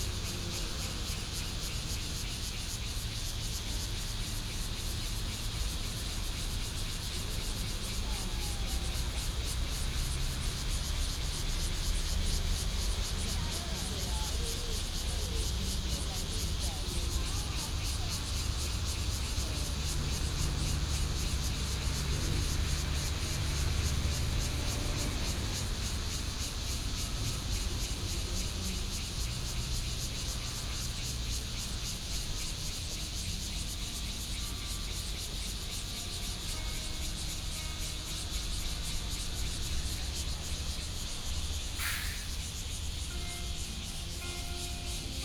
Cicadas sound, Traffic Sound, The weather is very hot
Binaural recordings
Songyuan Street, Hualien County, Taiwan, 27 August 2014, ~18:00